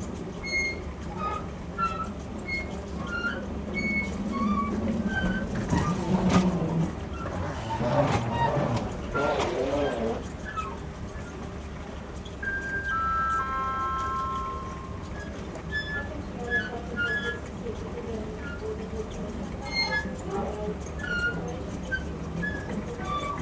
A surprisingly melody brightening the journey to Berlin.